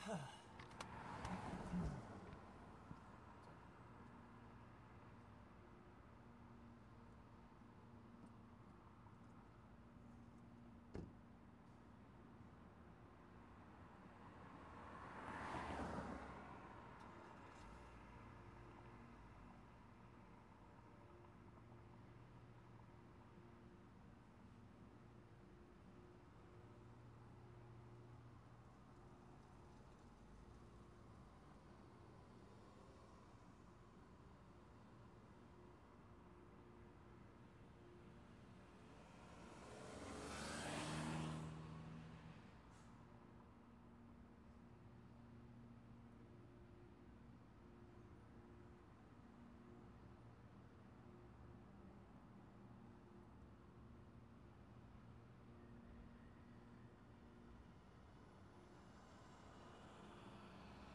University, Windsor, ON, Canada - Under Ambassador Bridge
Transport sounds from underneath Windsor's Ambassador Bridge.
24 October 2015